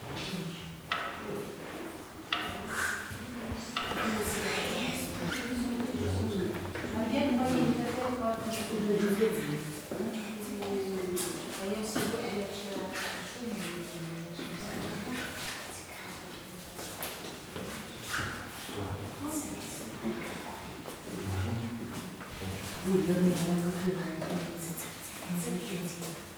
Orthodox Assumption Monastery of the Caves, Bakhchsysaray, Crimea, Ukraine - Into the valley, into the chapel
The Assumption Monastery of the Caves is carved into a cliff. The date of it's foundation is disputed, although local monks assert that it originated as early as the 8th century but was abandoned when Byzantium lost its hold on the region. The current monastic establishment dates back to the 15th century.
In 1921 the monastery was closed by the Soviet government. After the dissolution of the Soviet Union and Ukrainian independence the monastery was reopened to the public in 1993. The self-empowered garde of Kosaks protects the site with whips and sabre against wrong behaving people and the local Tatars, whom they consider as a threat.
From the steps up into the entrance-hall-chapel, with a zoom recorder I catch the clouds of shouting swallows, monks and their herds of goats, sheep and cows in the valley, a huge modern drill carving deeper into the mountains on the other side and behind me tourists and helpers of the monastry pass.
16 July 2015